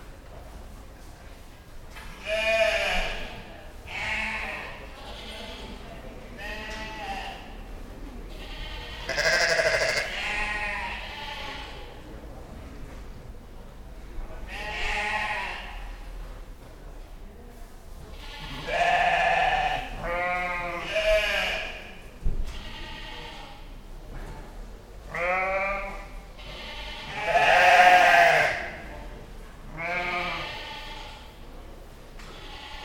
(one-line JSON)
{"title": "Woolfest, Mitchell's Auction House, Cockermouth, Cumbria, UK - Sheep baaing at the start of the second day of Woolfest", "date": "2015-06-27 08:36:00", "description": "This is the sound of the sheep in their pens at the start of day two of Woolfest. Woolfest is an amazing annual festival of sheep and wool where knitters can buy all manner of amazing woolly produce but also meet the shepherds and animals from whom these goods ultimately come. The festival is held in an enormous livestock auction centre, and the first and last comrades to arrive are the sheep, alpacas, and other friends with spinnable fleece or fibre. Their shepherds and handlers often camp on site and before the knitters arrive in their droves at 10am the pens are swept clean and the animals are checked over and fed. One of the shepherds explained that the sheep baa lots at this point in the day because each time a person walks by their pens they think they might be bringing food. You can also hear the swifts that roost in the roof of the auction mart, the rusty gates of the pens, the chatter of stall holders and somebody sweeping.", "latitude": "54.65", "longitude": "-3.38", "altitude": "77", "timezone": "Europe/London"}